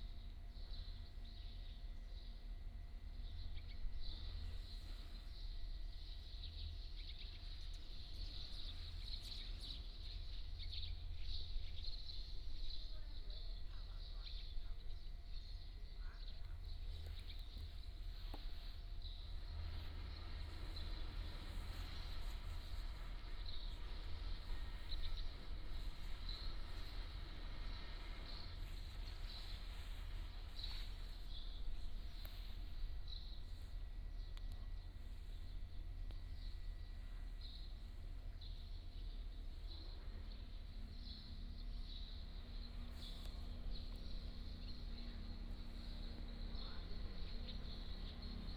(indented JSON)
{
  "title": "沙港村, Huxi Township - Small village",
  "date": "2014-10-22 08:29:00",
  "description": "Small village, Traffic Sound, Birds singing",
  "latitude": "23.61",
  "longitude": "119.63",
  "altitude": "11",
  "timezone": "Asia/Taipei"
}